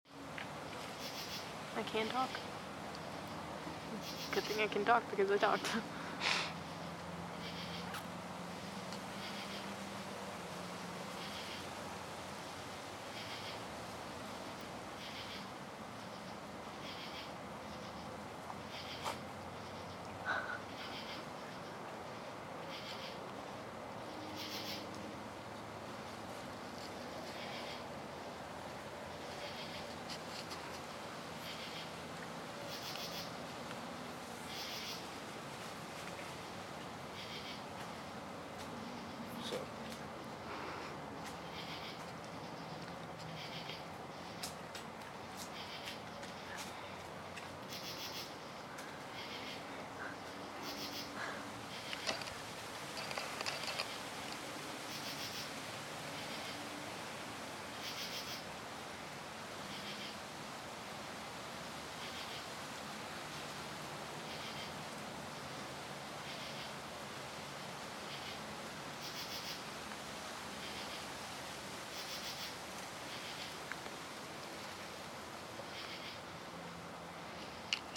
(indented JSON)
{
  "title": "New River, NC, USA - Howards Knob",
  "date": "2015-09-23 15:10:00",
  "description": "Overlook on Howards Knob: Wind blowing, crickets, beautiful fall day.",
  "latitude": "36.23",
  "longitude": "-81.68",
  "altitude": "1328",
  "timezone": "America/New_York"
}